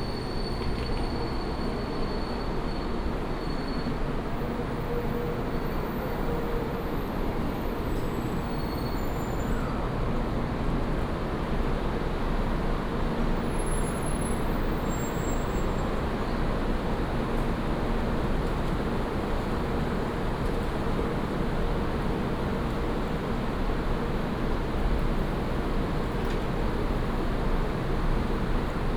HSR Taoyuan Station, Taiwan - At the station platform
At the station platform, Binaural recordings, Sony PCM D100+ Soundman OKM II
Zhongli District, Taoyuan City, Taiwan, 27 November 2017